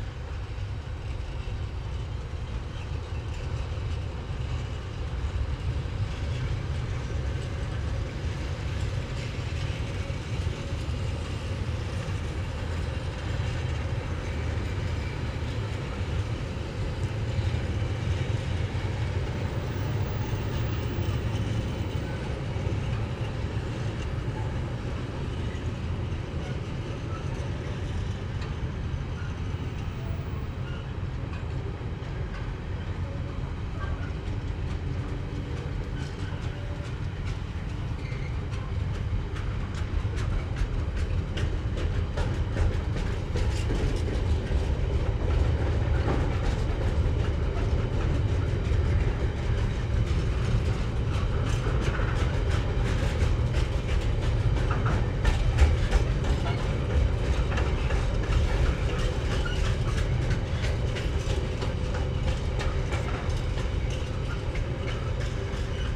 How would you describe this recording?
DR60D Mk11and a pair of Pluggies set XY with foam add-ons.